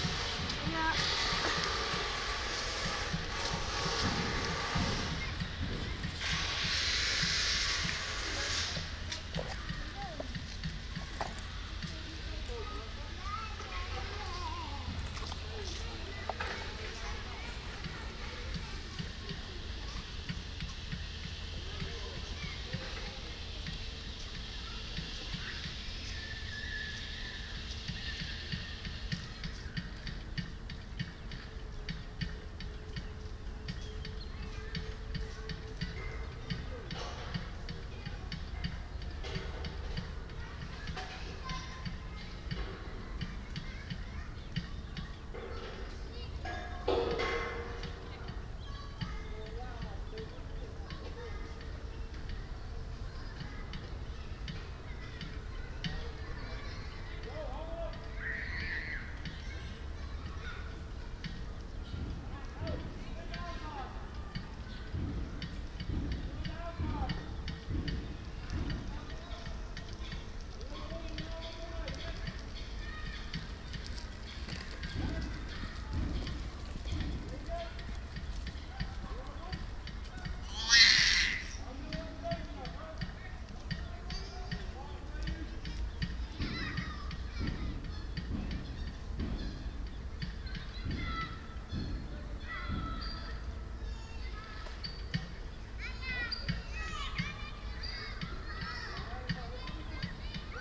Goetlijfstraat, Den Haag, Nederland - Buitenplaats Oostduin
General atmosphere with kids and construction work.
Binaural recording.